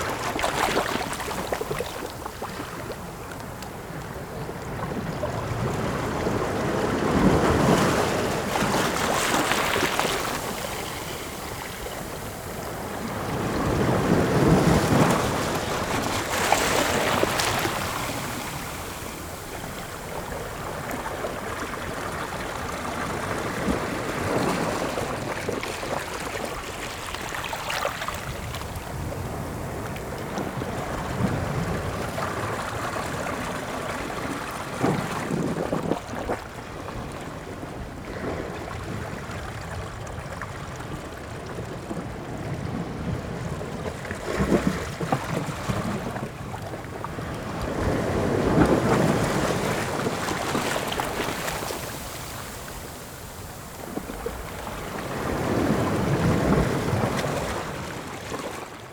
老梅海岸 Shimen Dist., New Taipei City - The sound of the waves